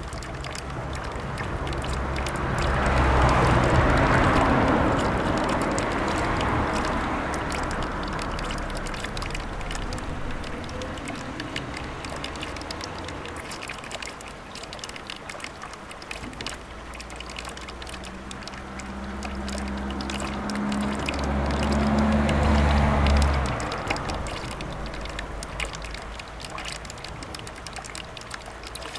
Rte de Seyssel, Chindrieux, France - Bassin de Praz
Le bassin fontaine de Praz au bord de la RD 991 construit en 1877 c'est l'année de l'invention de l'enregistrement sonore par Thomas Edison et Charles Cros. Une belle halte pour les cyclistes assoiffés. des fagots d'osier baignent dans l'eau.
France métropolitaine, France, 25 July 2022